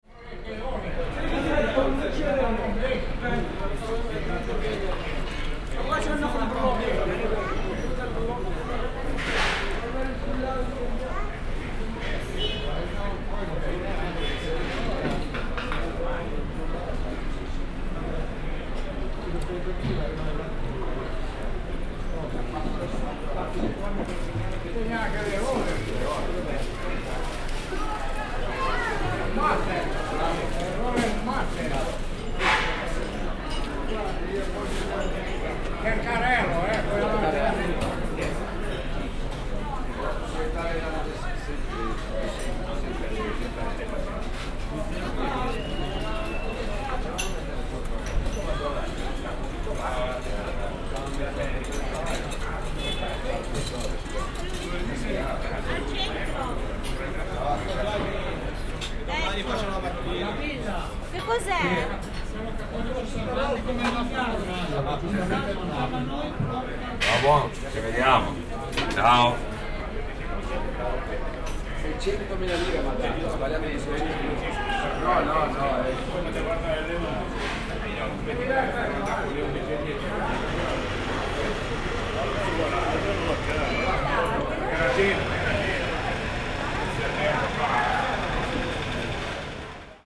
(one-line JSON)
{"title": "Genoa, Gran Ristoro", "date": "2001-03-01", "description": "very special food store in genova", "latitude": "44.41", "longitude": "8.93", "altitude": "20", "timezone": "Europe/Berlin"}